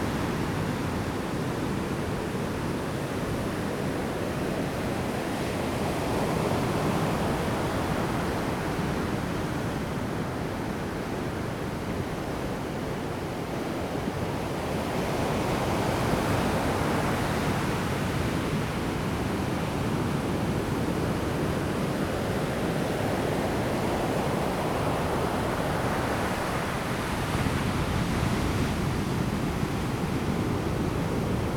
牡丹灣, 牡丹鄉, Pingtung County - In the bay
bay, Sound of the waves, wind
Zoom H2n MS+XY